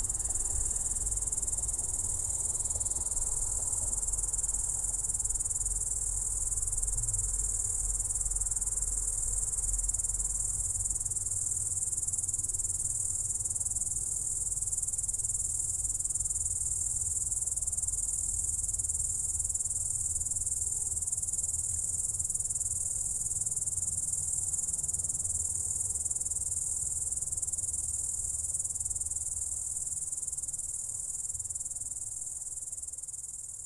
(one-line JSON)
{"title": "Kelmė, Lithuania, night at cemetery", "date": "2019-07-23 23:40:00", "description": "standing at cemetery and listening to night's soundscape", "latitude": "55.64", "longitude": "22.93", "altitude": "121", "timezone": "Europe/Vilnius"}